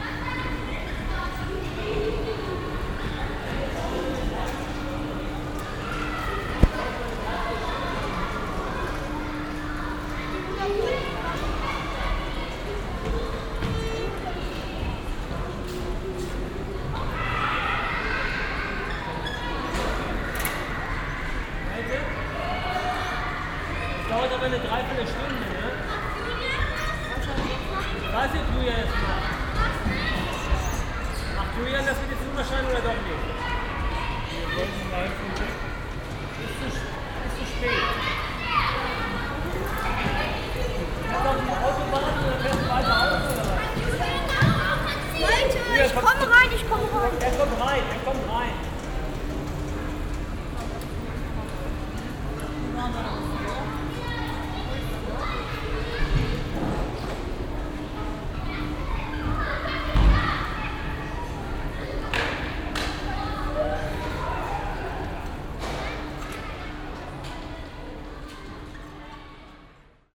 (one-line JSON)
{"title": "wolfsburg, autostadt, mobiversum", "description": "nachmittagsbetrieb, kinder auf tretfahrzeugen, elternrufe\nsoundmap:\ntopographic field recordings and social ambiences", "latitude": "52.43", "longitude": "10.79", "altitude": "62", "timezone": "GMT+1"}